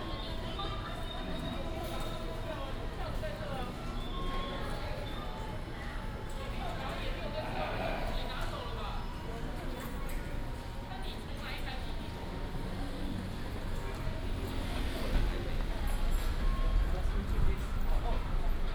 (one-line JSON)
{"title": "Hsinchu Station - In the station hall", "date": "2017-01-16 10:17:00", "description": "In the station hall, Traffic sound, Station Message Broadcast sound", "latitude": "24.80", "longitude": "120.97", "altitude": "28", "timezone": "GMT+1"}